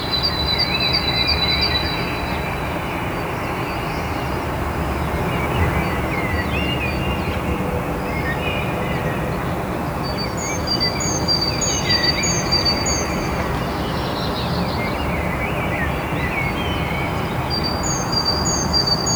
An (inevitably) urban Dawn Chorus, recorded in confinement. While the lockdown had silenced most of the traffic and city rumble, changing to Summer Daylight Saving Time pushed ahead the start of the working day for the few industries that kept going, so it coincided with the high point of the daily dawn chorus.